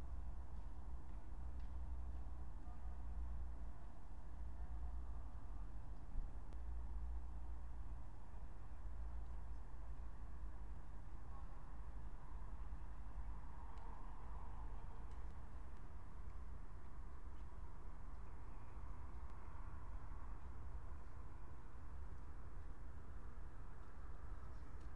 Brno, Lužánky - park ambience at night
22:58 Brno, Lužánky - park ambience, winter night
(remote microphone: AOM5024HDR | RasPi2 /w IQAudio Codec+)